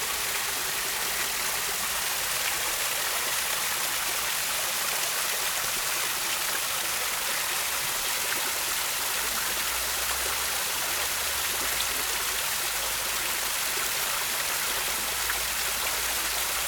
Lisbon, Portugal - Luminous Fountain, Lisbon
Luminous Fountain in Alameda, Lisbon. Recorded at night.
Zoom H6